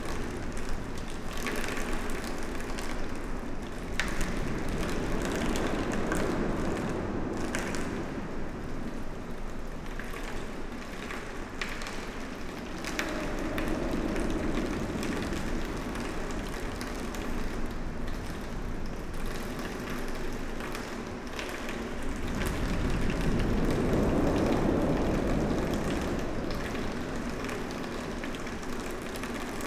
Dinant, Belgium - Charlemagne bridge
Inside the Charlemagne bridge, sound of the water collected in strange curved tubes. Water is flowing irregularly.